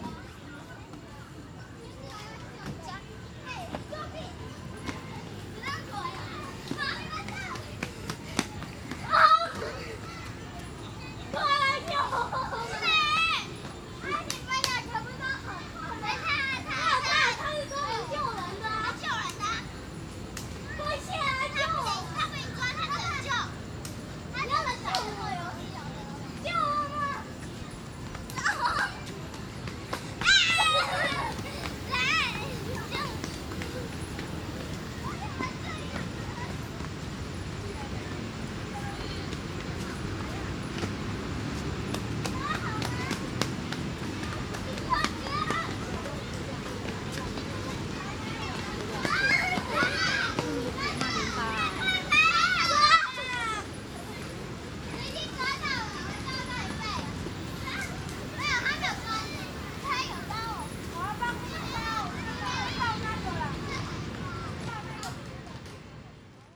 仁愛公園, Yonghe Dist., New Taipei City - Children
Children, In Park
Sony Hi-MD MZ-RH1 +Sony ECM-MS907